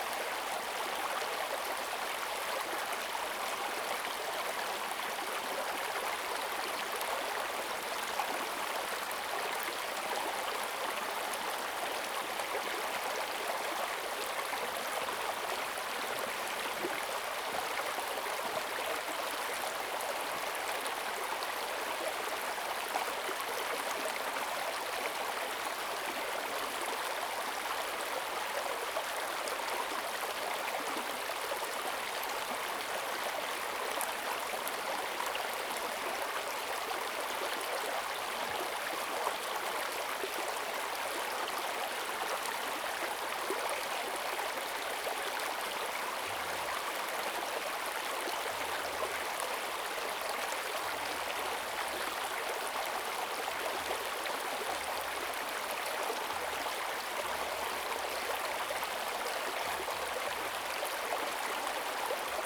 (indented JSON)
{
  "title": "種瓜坑溪, 成功里, Puli Township - Upstream",
  "date": "2016-04-28 10:43:00",
  "description": "streams, Small streams\nZoom H6 XY",
  "latitude": "23.96",
  "longitude": "120.89",
  "altitude": "464",
  "timezone": "Asia/Taipei"
}